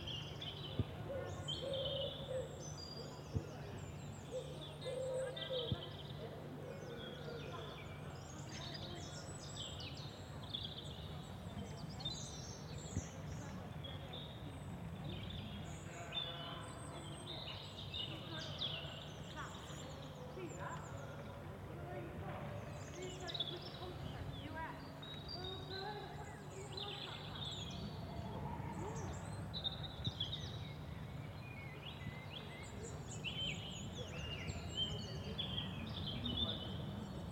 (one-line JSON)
{"title": "Biblins, Forest of Dean, Ross-on-Wye, UK - Echoes on the River Wye", "date": "2016-03-25 11:50:00", "description": "Sounds (birdsong, people shouting, dogs barking) generated on the English side of the River Wye, crossing the river and border into Wales, hitting the hill on the Welsh side of the river and bouncing back into England.\n(Audio Technica BP4025 XY mic into a Sound Devices 633 recorder)", "latitude": "51.83", "longitude": "-2.66", "altitude": "33", "timezone": "Europe/London"}